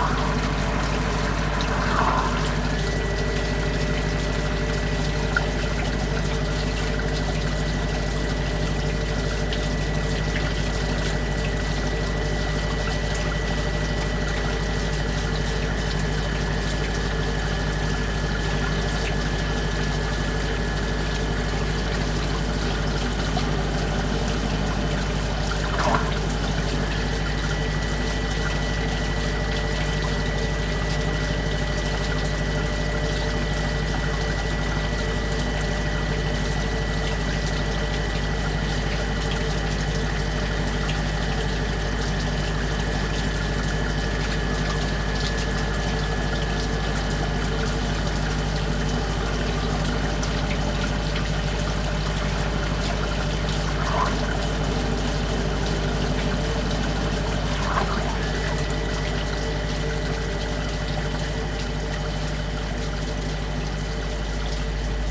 Svalbard, Svalbard and Jan Mayen - the carbon storage
The University of Longyearbyen have a co2 storage project which was under testing one day that I passed by.
Longyearbyen, Svalbard and Jan Mayen, 29 September 2011